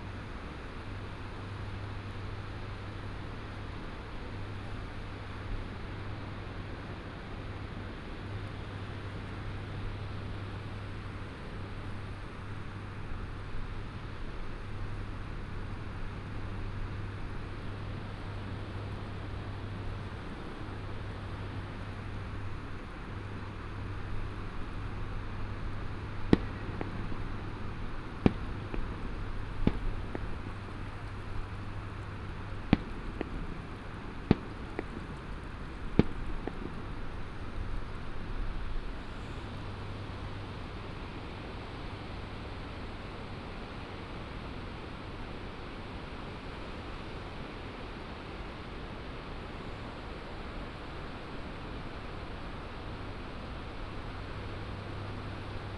白沙屯漁港, Tongxiao Township - In the fishing port of the beach
In the fishing port of the beach, Fireworks and firecrackers sound